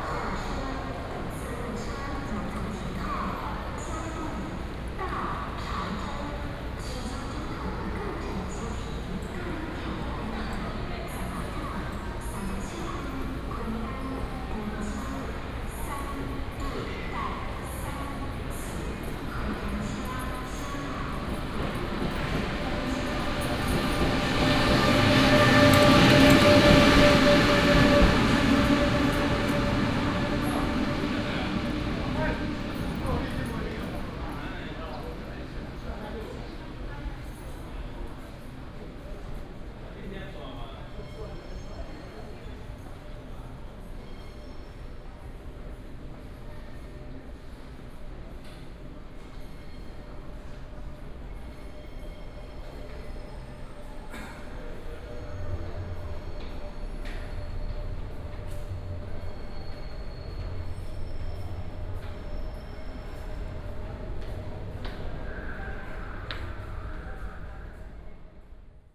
From the station hall, Through the underground road, To the station platform
Chiayi City, West District, 嘉義火車站第二月台